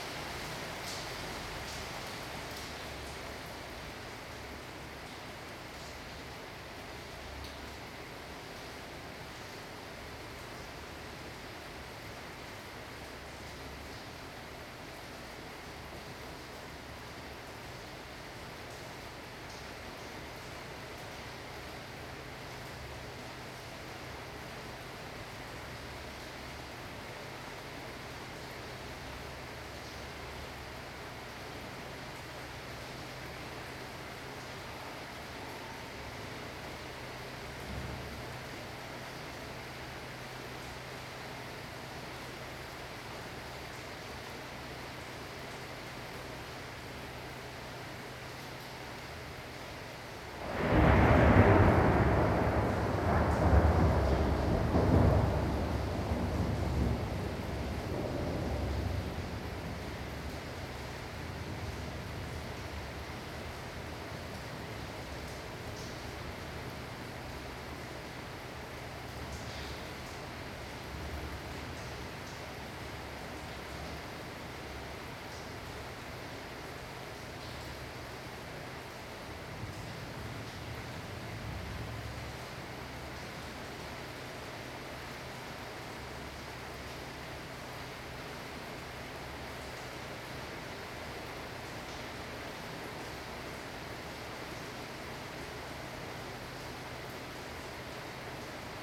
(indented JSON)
{
  "title": "El Barri Gòtic, Barcelona, Spain - stairwell thunder",
  "date": "2003-09-16 20:27:00",
  "description": "rain and thunder echoing in stairwell.",
  "latitude": "41.38",
  "longitude": "2.18",
  "altitude": "30",
  "timezone": "Europe/Madrid"
}